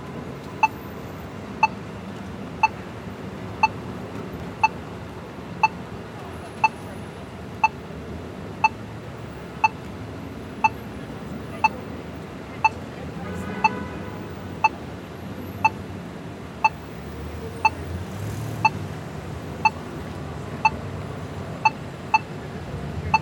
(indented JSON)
{
  "title": "Lexington Ave, New York, NY, USA - Audible Crosswalk Signal, NYC",
  "date": "2022-03-28 11:15:00",
  "description": "Audible crosswalk signal at Lexington Ave, Manhattan.",
  "latitude": "40.75",
  "longitude": "-73.98",
  "altitude": "15",
  "timezone": "America/New_York"
}